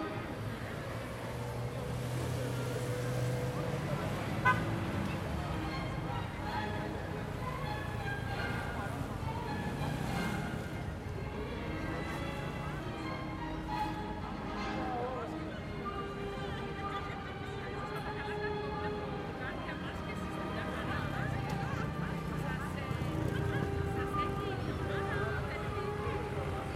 Mild traffic, people passing by talking, music playing on speakers.